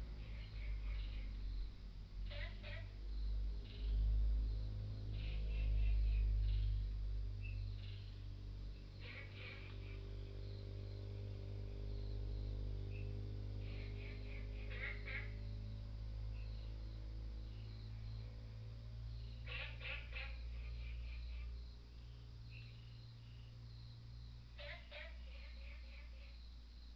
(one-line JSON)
{"title": "綠屋民宿, 桃米生態村 - Frogs sound", "date": "2015-04-28 22:07:00", "description": "Frogs sound, at the Hostel", "latitude": "23.94", "longitude": "120.92", "altitude": "495", "timezone": "Asia/Taipei"}